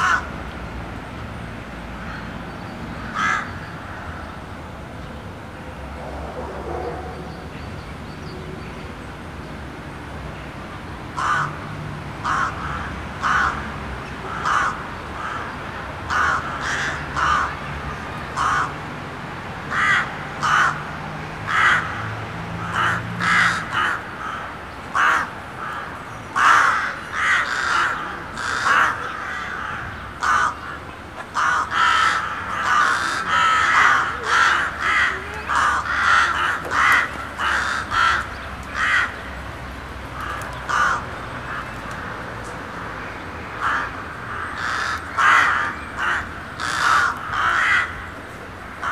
Poznan, Jana III Sobieskiego housing estate - crows occupying tall trees
a flock of crows occupying a few tall trees. having their croaking conversations, flying around, jumping from branch to branch. their voices intensify like a wind. one minute they sit quietly, the other it morphs into blizzard of screams just turn into sparse beak snaps a second later.
Poznan, Poland, 1 March 2014, ~12:00